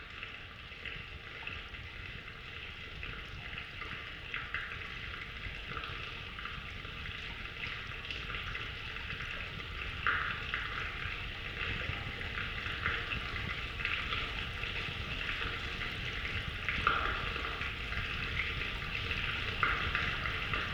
Houtrustweg, Den Haag - hydrophone rec inside a drain
Mic/Recorder: Aquarian H2A / Fostex FR-2LE
The Hague, The Netherlands